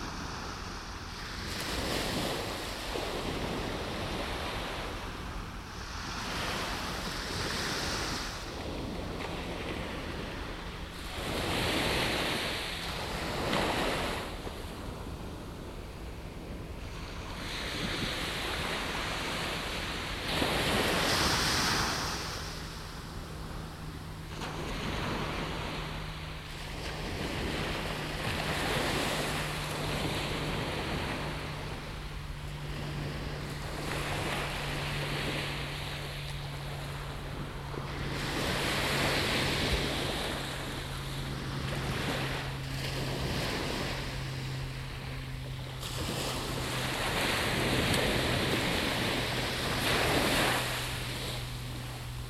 Scheveningen Beach (after dark) - Nocturnal beach
The waves breaking, probably one of the most recorded sounds. But I never actually made a proper recording of it. I accidentally drove past the beach when I realized there were no people and there was no wind. A good opportunity to make a recording. Recorded around 21:30hrs on March 5th, 2014.
Recorded with a Zoom H2 with additional Sound Professionals SP-TFB-2 binaural microphones.